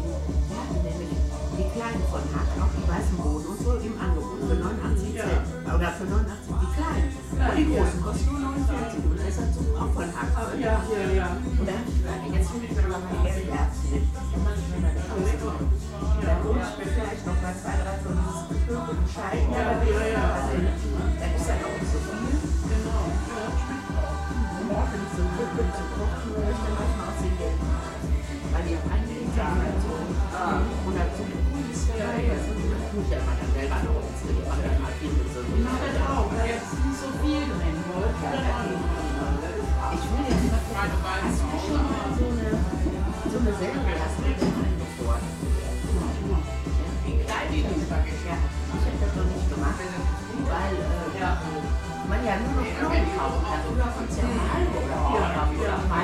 Sedansberg, Wuppertal, Deutschland - schützeneck
schützeneck, schützenstr. 109, 42281 wuppertal